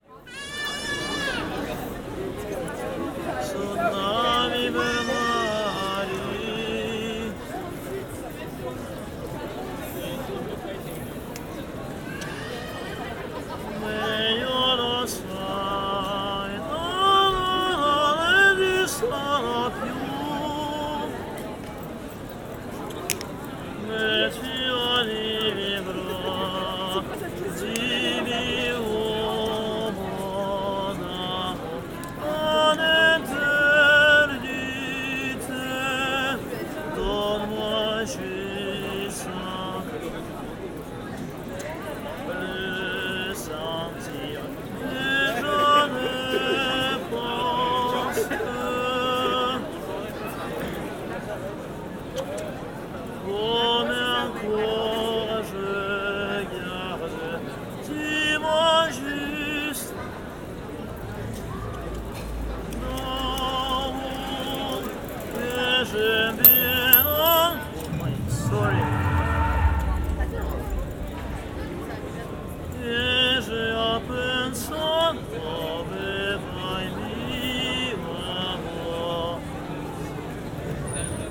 Pl. de la Cathédrale, Strasbourg, Frankreich - blind singer in front of the cathedral
in the evening, many tourists and people, a blind singer collects money.(ambeo headset)